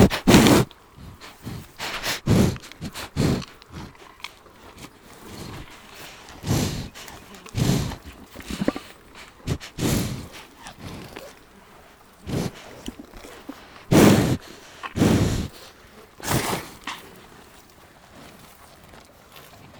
Walking along a small road, some cows went to see us. We were their television ! I recorded 2 cows eating. Microphones are almost into the muzzle, it's disgusting !